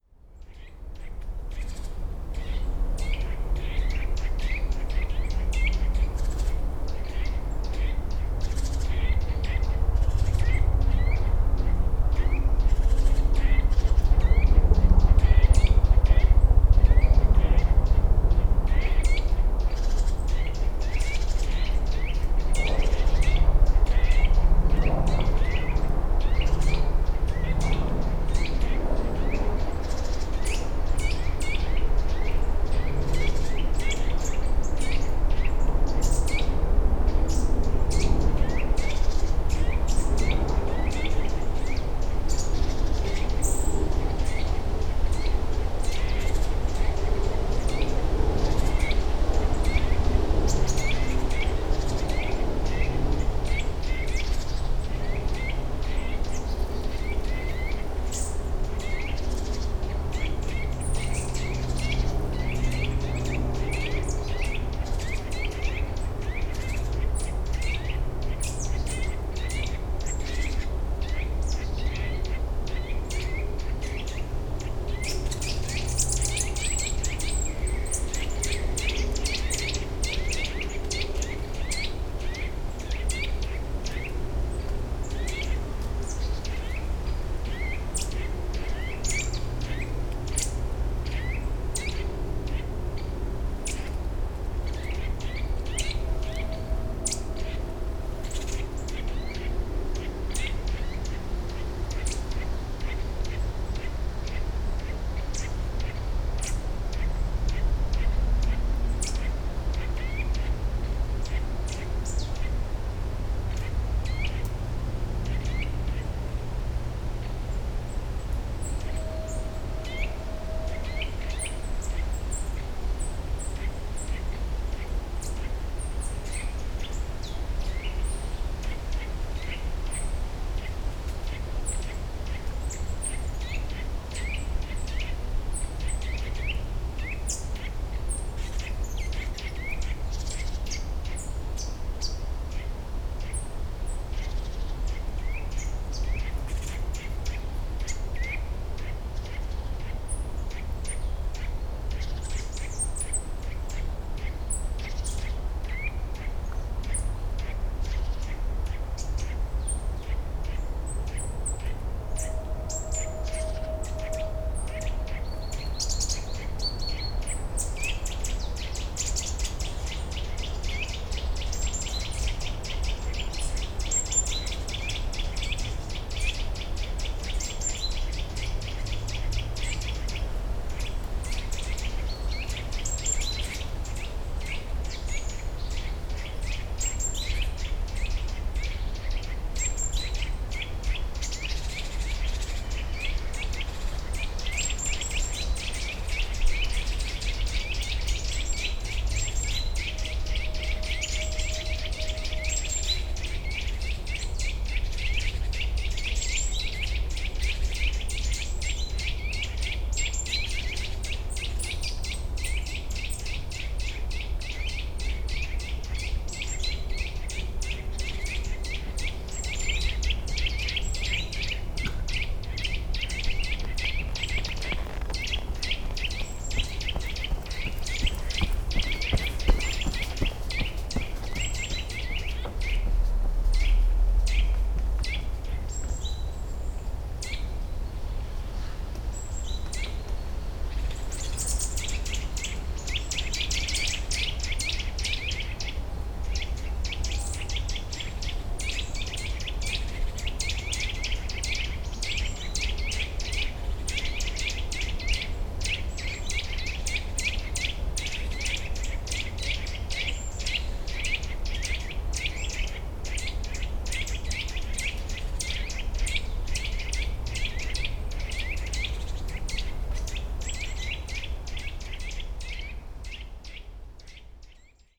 Morasko, road towards the nature reserve - birds and helicopter
some intense bird activity, helicopter flies by, runner on the path (roland r-07)
wielkopolskie, Polska, 19 September, 09:05